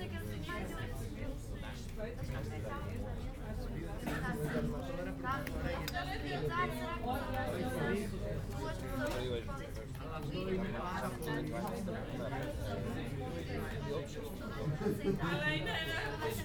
bar at Praça dos Poveiros at night
Oporto, Portugal, 13 October